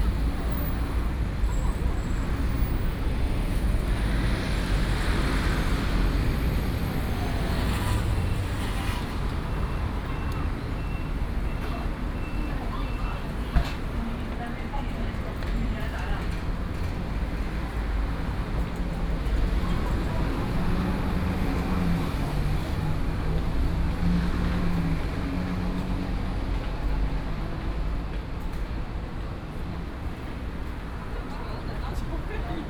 Walking on the road, Traffic noise, Thunder sound